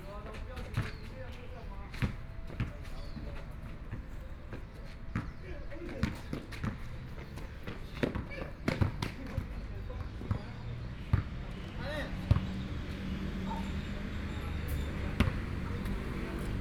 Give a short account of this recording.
In the corner of the small park, Playing basketball voice, Chat between elderly, Binaural recordings